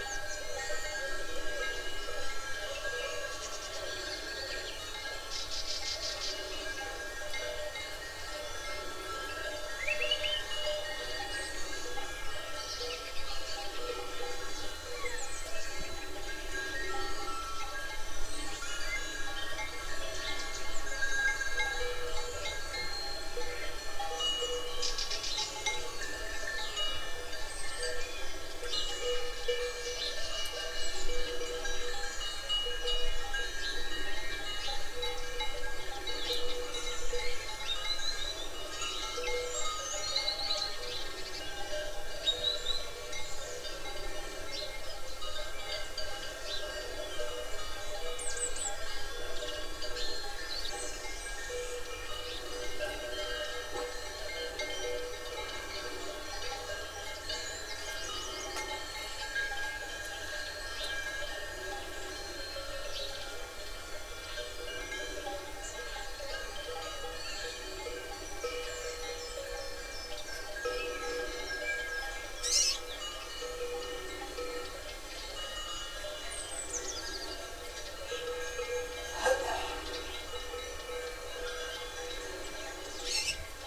Dawn at Évoramonte hill, Alentejo, goats, dogs and birds make up the soundscape. Recorded with a stereo matched pair of primo 172 capsules into a SD mixpre6.